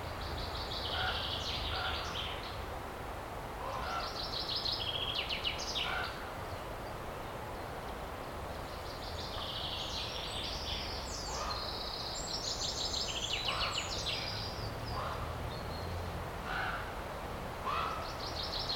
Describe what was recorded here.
Soundscape from the bottom of the dry stream. Wind is blowing, birds are singing and screaming, rare fly is buzzing... Some distant sounds like cars and train can be heard. Recorded with Zoom H2n, surround 2ch mode